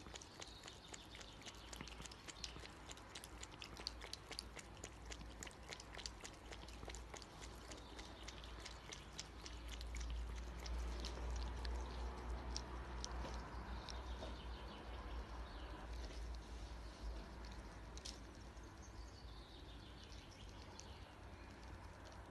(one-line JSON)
{"title": "Purkyňova, Hodonín, Česko - Cat drinks watter", "date": "2020-04-18 12:31:00", "latitude": "48.86", "longitude": "17.14", "altitude": "175", "timezone": "Europe/Prague"}